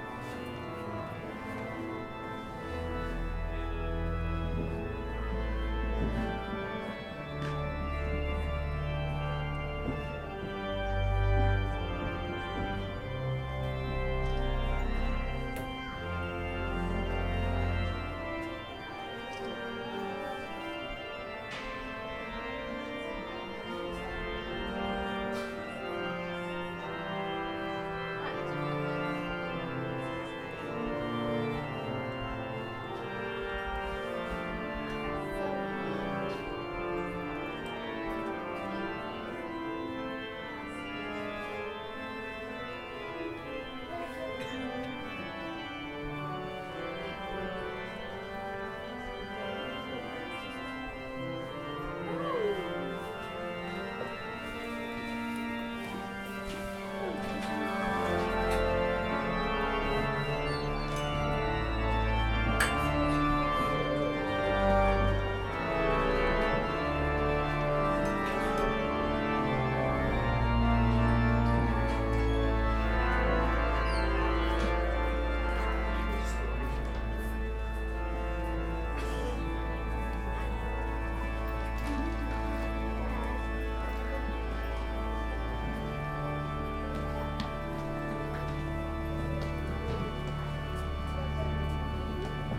Camogli, Genua, Italien - Orgelspiel und ein Kommen und Gehen
Die Messe beginnt, das Leben auf dem Kirchplatz nimmt seinen Lauf. Am Kircheneingang herrscht ein Kommen und Gehen. Die unvermeindliche Vespa bildet den Schluss der himmlischen Klänge.
San Rocco Genoa, Italy